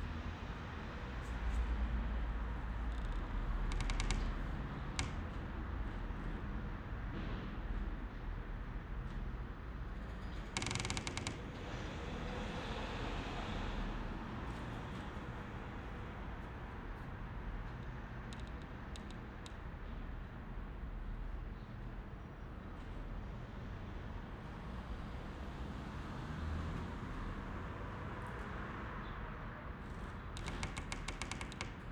old casino, Glavni Trg, Maribor - room ambience, traffic waves, squeaking windows
listening to waves of traffic around Glavni Trg, various squeaking windows, inside a former casino, 1st floor. The building is used as a Cafe and project space.
(SD702, Audio Technica BP4025)
31 March 2017, 11:50